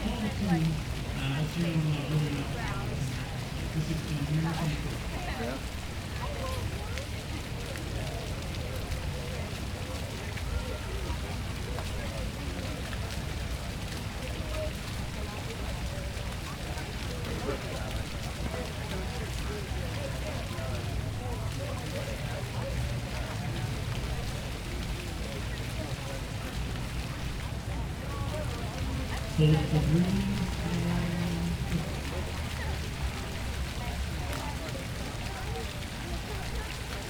neoscenes: band medley near fountain
Prescott, AZ, USA